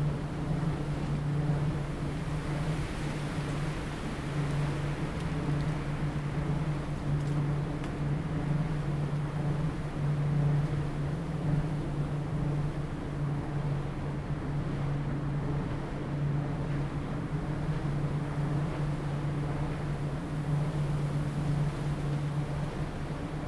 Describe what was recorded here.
In the woods, wind, Wind Turbines, Zoom H2n MS+XY